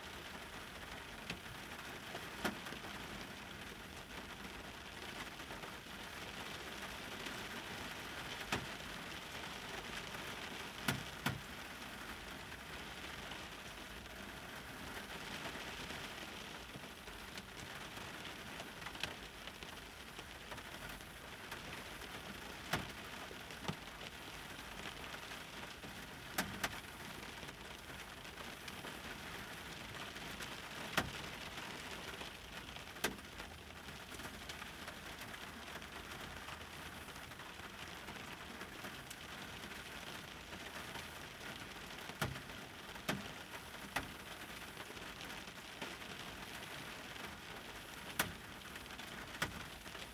Ypsilanti, MI - rain in car
3 April 2018, 20:30